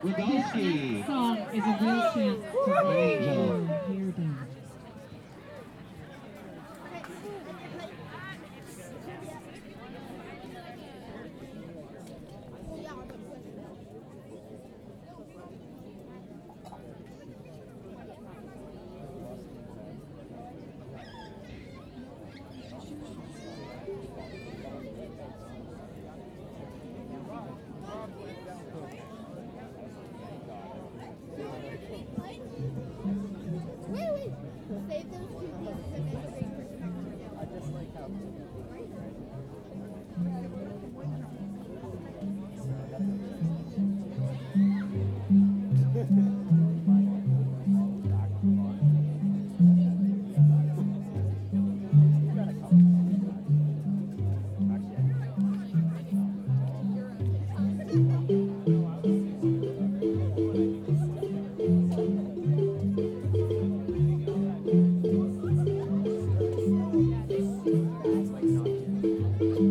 Cardboard House Bakery, Hornby Island, BC, Canada - Pizza order ready. Also Amani Marimba band!
The family's pizza order is called out. Hornby Island's Amani Marimba band entertains a large mellow crowd.
17 August 2015